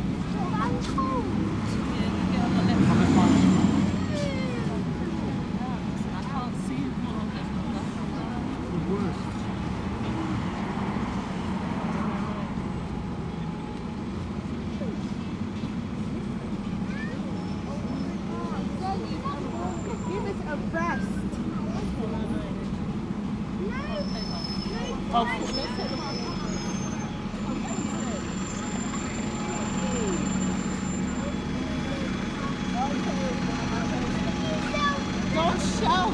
Brixton, London, UK - You Get to Listen to My Music with an American Flag on It
Recorded on the street and in a bus with a pair of DPA 4060s and a Marantz PMD661